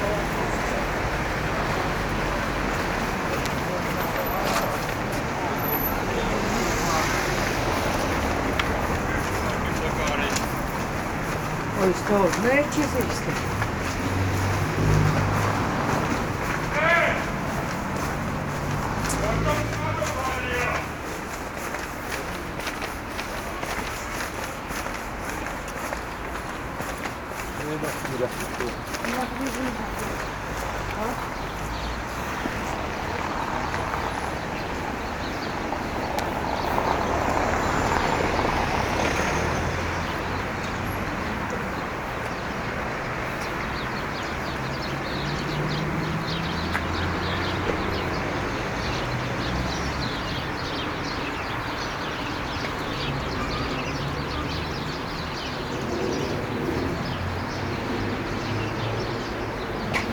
Graefestraße, Berlin, Deutschland - Soundwalk Graefestrasse
Soundwalk: Along Graefestrasse until Planufer
Friday afternoon, sunny (0° - 3° degree)
Entlang der Graefestrasse bis Planufer
Freitag Nachmittag, sonnig (0° - 3° Grad)
Recorder / Aufnahmegerät: Zoom H2n
Mikrophones: Soundman OKM II Klassik solo